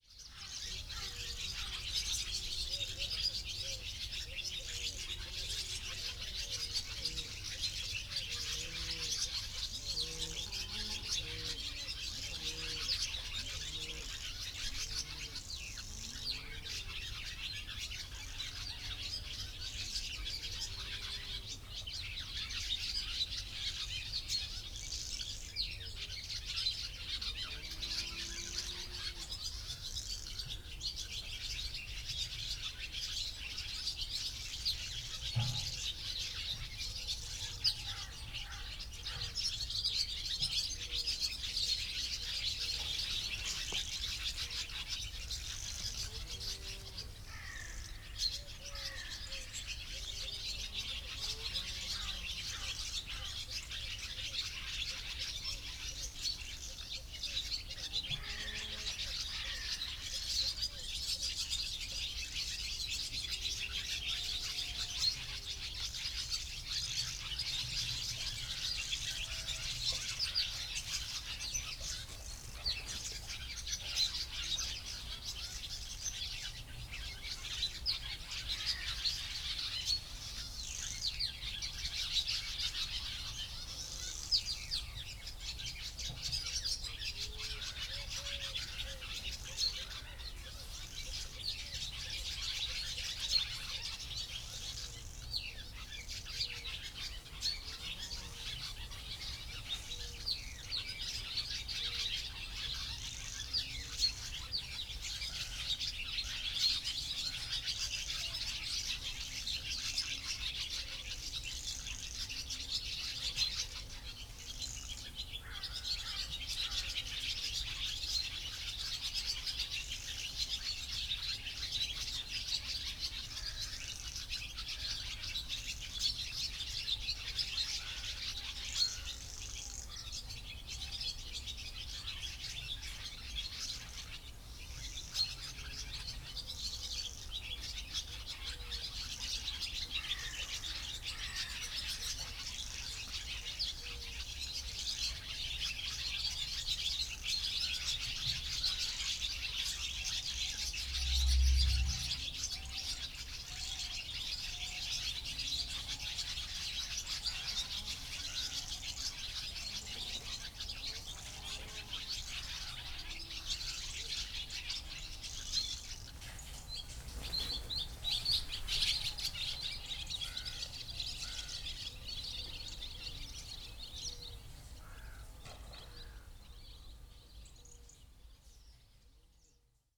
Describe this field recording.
Kienitz, river Oder, Oderbruch, early morning, swallows gathering in a tree, they're about to leave, summer's over. Heard on the balcony of a guesthouse. (Sony PCM D50, DPA4060)